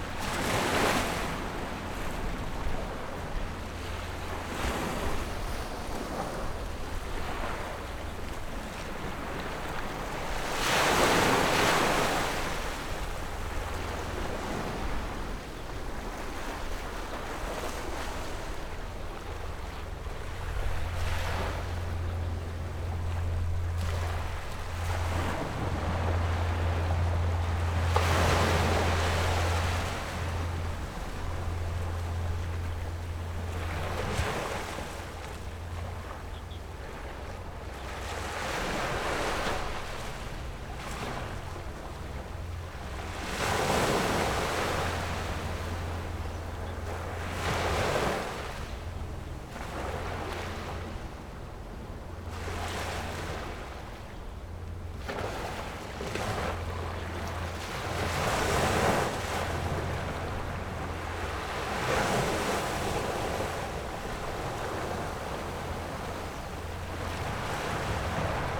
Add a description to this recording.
In the dock, Windy, Tide, Zoom H6 +Rode NT4